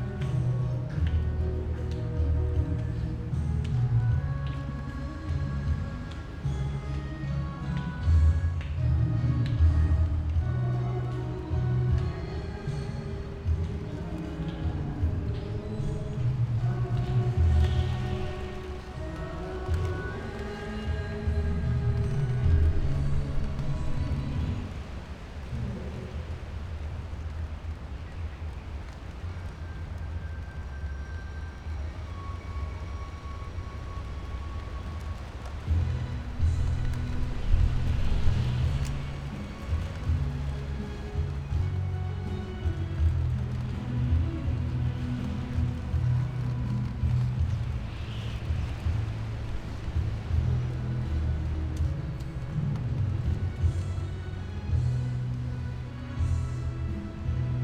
觀音亭海濱公園, Magong City - in the Park
in the Park
Zoom H6+Rode NT4
Magong City, Penghu County, Taiwan, 23 October 2014